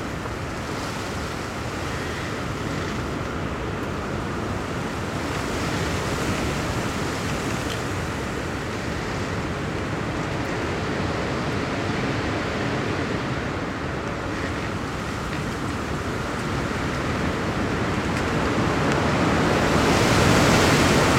Utena, Lithuania, old willow tree in wind
I know this old willow tree from my teens. It was looking monstrous even then and now, after all years, the tree is starting to fall apart. Several gigantic branches are broken and lay on the ground. It's dangerous to stand under the willow in windy days because you don't know what branch will break and fall down. Maybe some day I will not find the willow standing, so today I have recorded it in the wind. Just placed small mics in the cracks in the bark....
16 May, 16:40